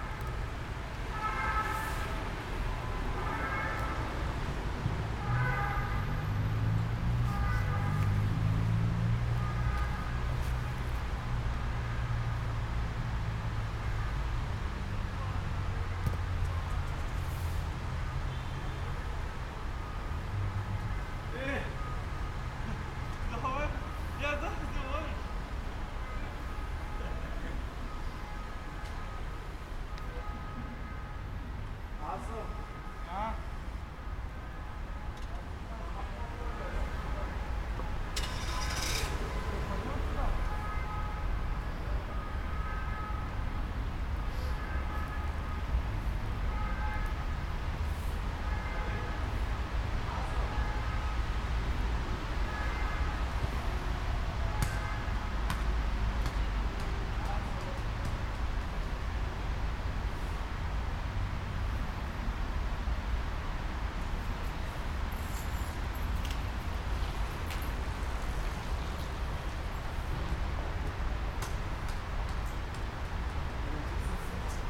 Quai du Commerce, France - Lyon Vaise Stade boucaud
Lyon Vaise, stade Boucaud, nuit tombée, rumeur de la ville et quelques footballeur - Zoom H6, micros internes.
22 November